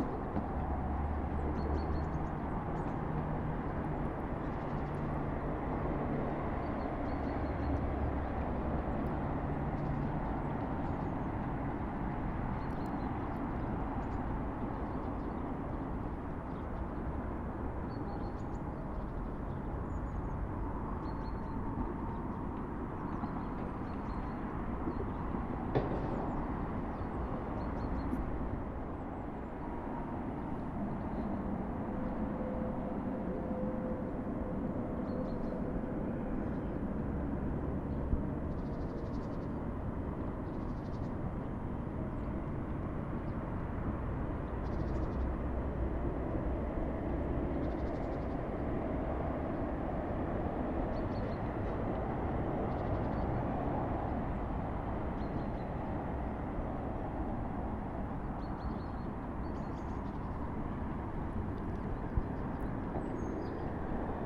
Nad Kotlaskou, Liben
soundscape from the hill Hajek, streets Nad Kotlaskou, 23 December 2009
Prague, Czech Republic